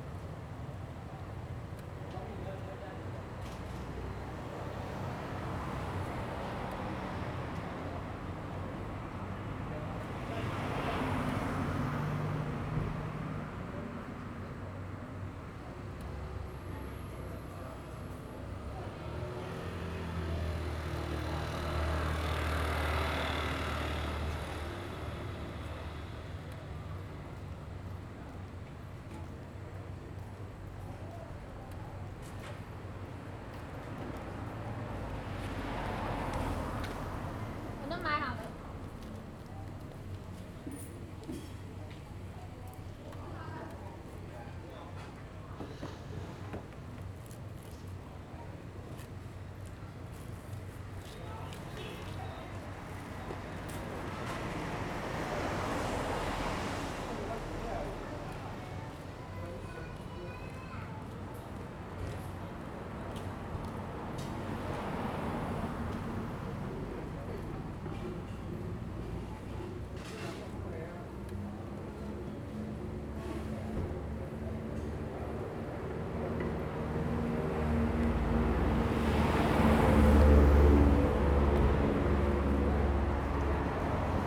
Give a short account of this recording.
A small village in the evening, Traffic Sound, Zoom H2n MS +XY